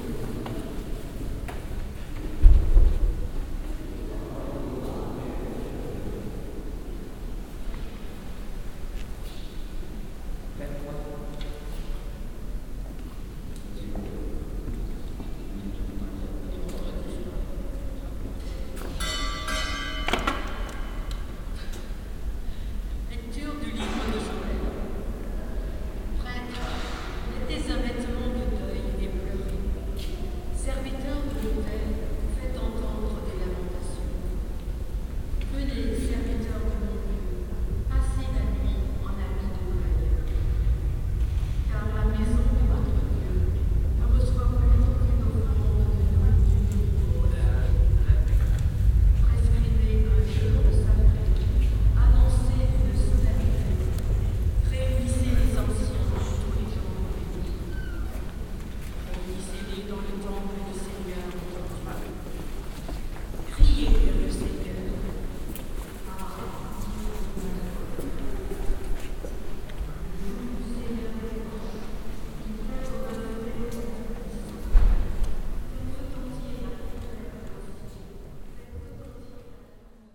paris, church st. eustache, divine service
a small public divine service in the huge, old catholic church
international cityscapes - social ambiences and topographic field recordings